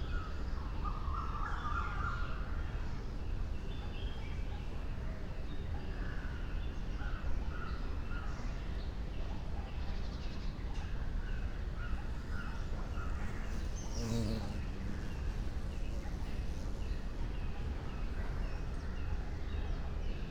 08:30 Film and Television Institute, Pune, India - back garden ambience
operating artist: Sukanta Majumdar